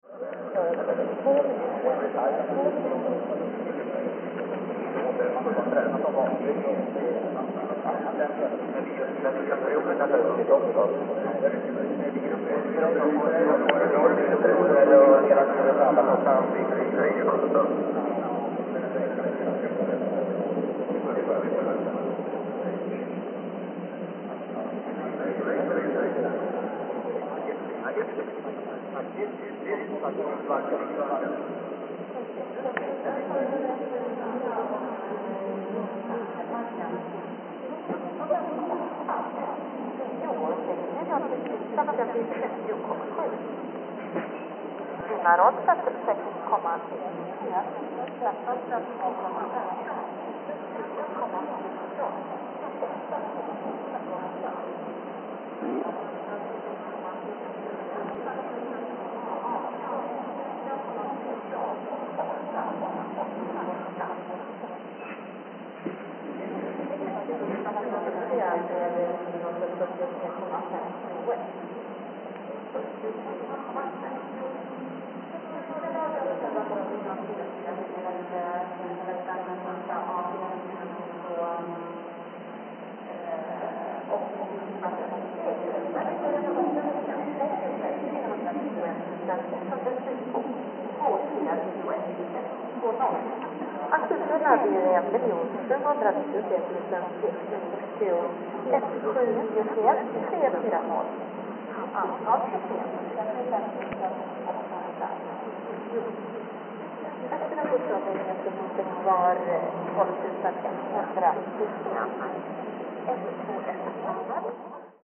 Backen church cemetary, Umeå
PA system echoing across the river valley from the race track.
Umeå Municipality, Sweden, 10 January 2011